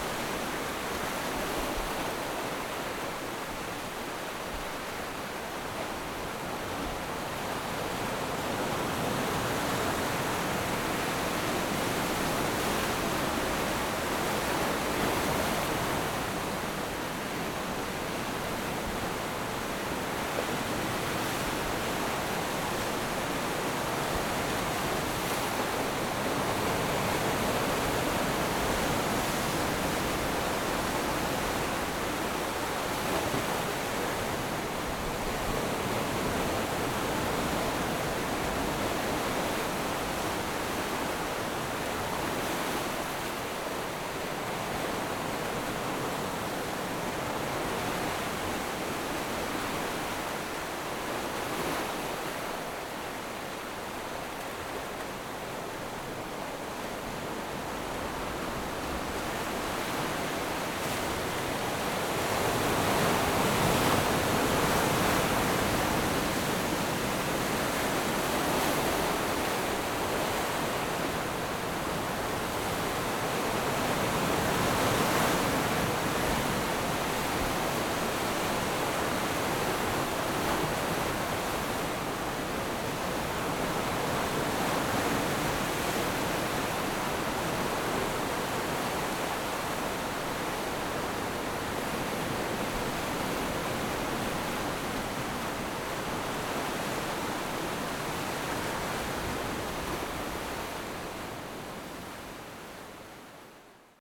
石城里, Toucheng Township - Sound of the waves
Sound of the waves, On the coast
Zoom H6 MS mic + Rode NT4